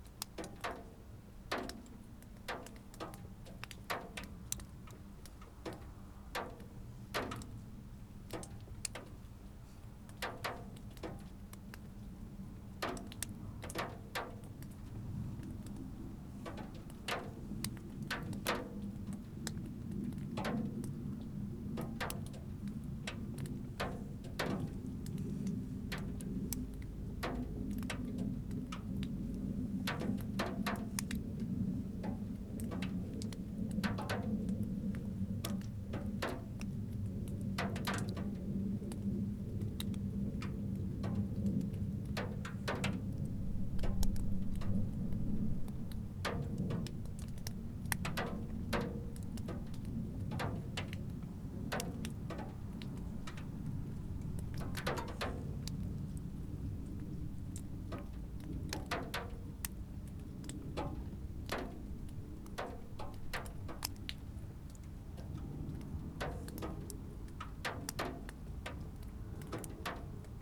Lithuania, Sirutenai, melting white frost
white frost melts and drips down from the roof
16 December 2011, 13:20